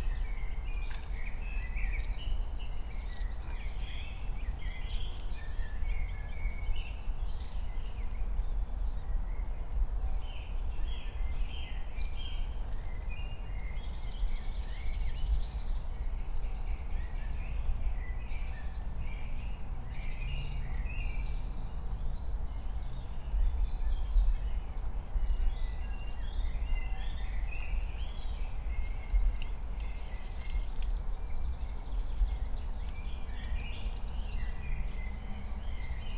Königsheide, Berlin - forest ambience at the pond
12:00 drone, wind, Bells, birds, woodpecker
Deutschland, 23 May 2020